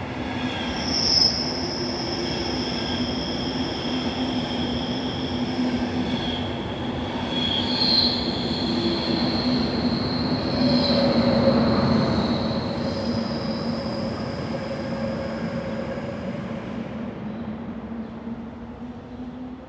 S Friedrichstraße, Mitte, Berlin, Deutschland - S Friedrichstraße track 2, Berlin - train passing
S Friedrichstraße track 2, Berlin - train passing. [I used the Hi-MD-recorder Sony MZ-NH900 with external microphone Beyerdynamic MCE 82]